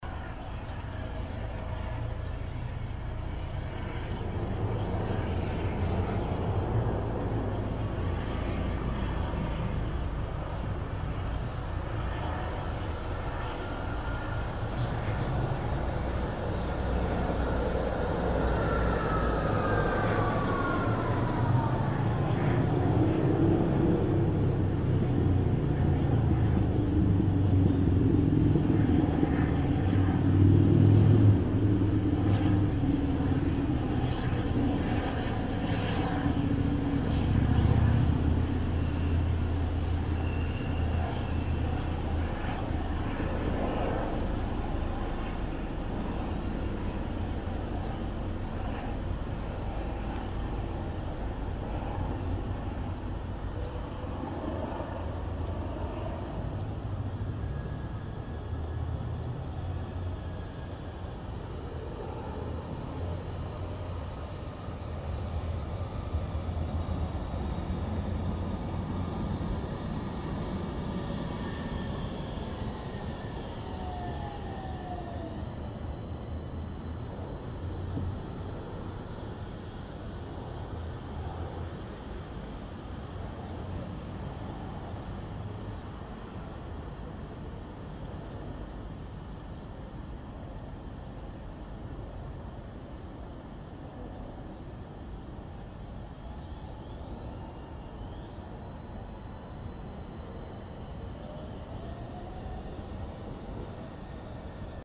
Gothenburg, helicopters, tramways and interspaces
A quiet citys most piercingly disturbing sound. Sound coming down like rain, machines mistaken for the pulse of ones own blood and breath.